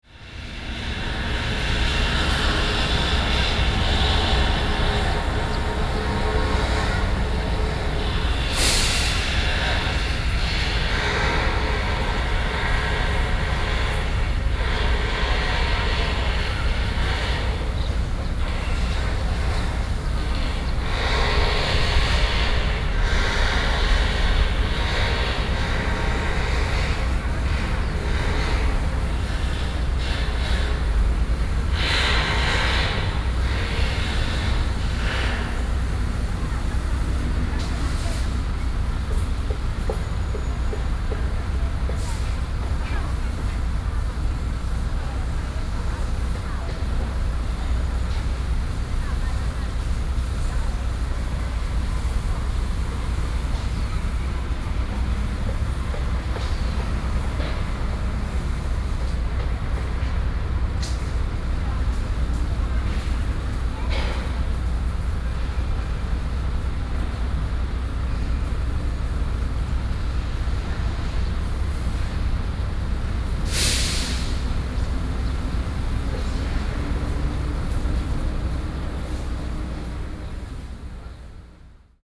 Construction site noise, Zoom H4n+ + Soundman OKM II
New Taipei City, Taiwan, 28 June, 15:39